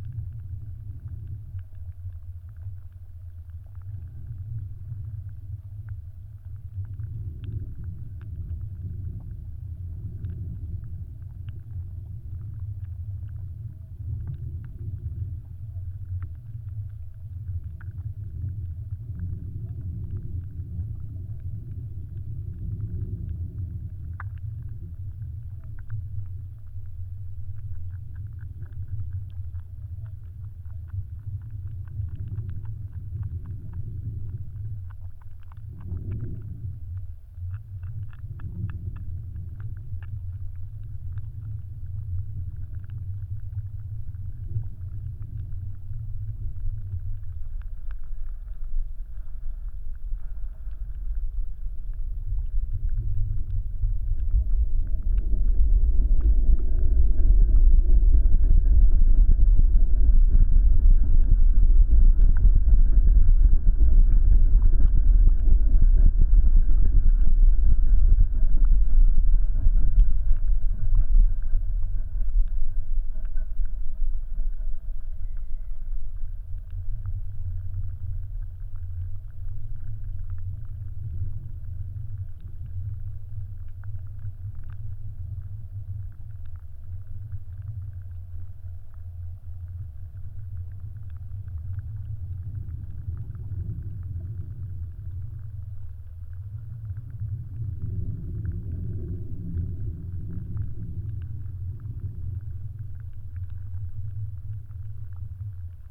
Jūrmala, Latvia, wavebreaker in river
geophone on concrete wavebreaker and hydrophone near it
Vidzeme, Latvija, 21 July 2020